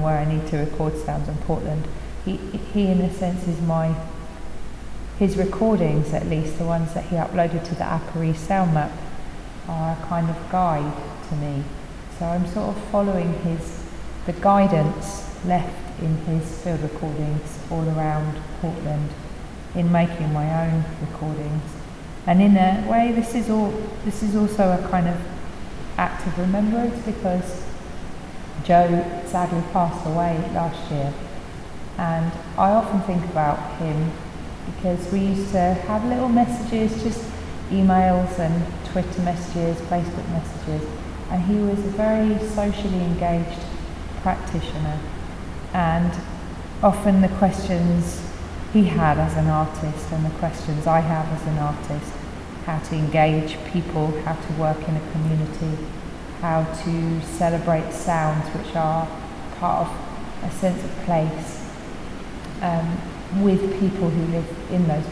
rainy day at Drill Hall, Portland, Dorset - remembering Joe Stevens
I had a lovely conversation with Hannah Sofaer who remembers Joe from his creative conversations radio broadcasts; we spoke about Joe and about my interest in retracing his steps. She thought I should make a recording explaining some of this and so I did try. I probably should have had the mic a bit closer to me, but the hall is so amazing and I wanted to capture more of the resonance of the space, the traffic outside. I hope you can still hear me trying to explain myself.